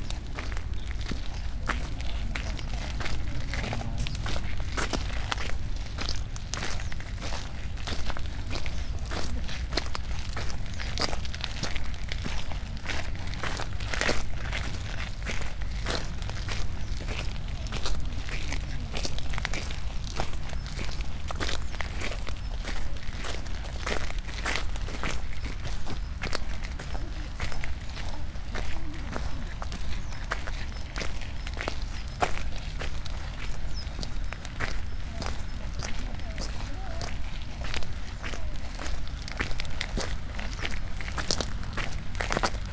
Nishihama shore, Shugakuin Imperial Villa, Kjoto - walking
gravel path, steps, birds
last in a walking line
guardian with a cigaret and his discreet impatience